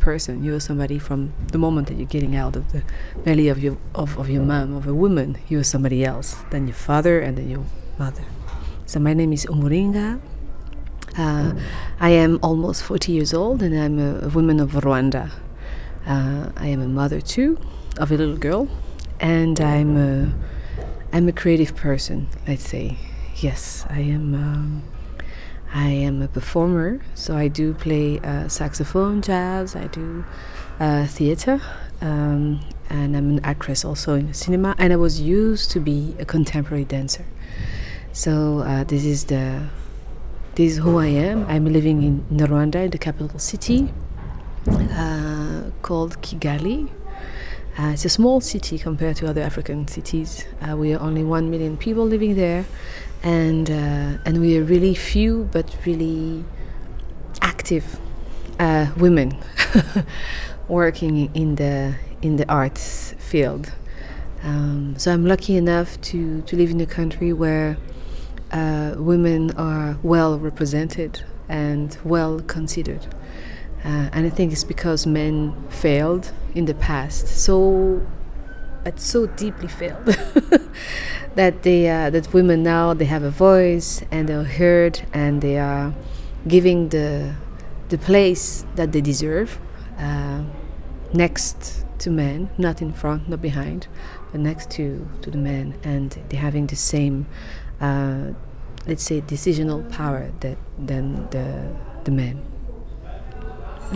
City Library, Hamm, Germany - My real name is Umulinga…
We are with the actor Carole Karemera from Kigali, Rwanda was recorded in Germany, in the city library of Hamm, the Heinrich-von-Kleist-Forum. Carole and her team of actors from the Ishyo Art Centre had come to town for a week as guests of the Helios Children Theatre and the “hellwach” (bright-awake) 6th International Theatre Festival for young audiences. Here Carole begins to tell her story… my real name is Umulinga…